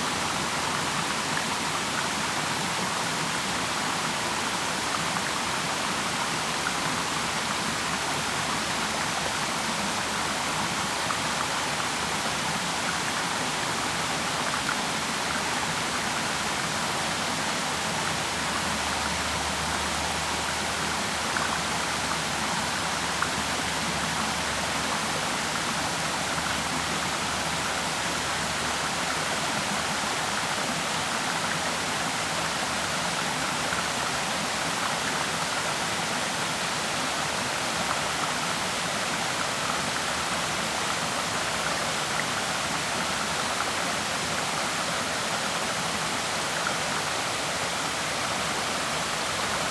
佳山溪, 佳民村 Xiulin Township - stream
stream
Zoom H2n MS+XY +Sptial Audio
Hualien County, Taiwan, December 2016